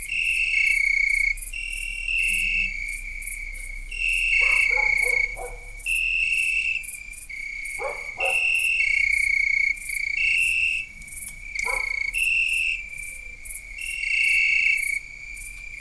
Brhlovce, Brhlovce, Slovensko - Village at night: Insects, dogs and TVs
Village at night: Insects, dogs and TVs
Recorded with LOM USI